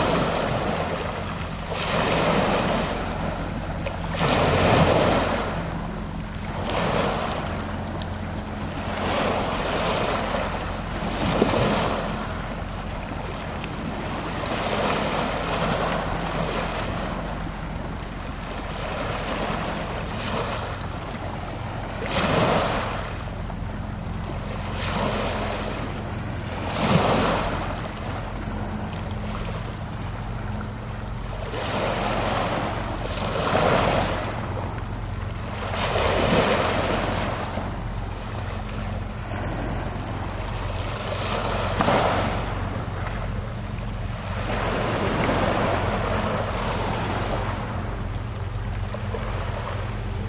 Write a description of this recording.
The beach here faces open sea. Bu the waves bring in all the leftovers the city of Istanbul couldn digest. The peaceful sound of a beach plastered with old floor tiles and irrecognizable plastic fragments of the worlds daily diet.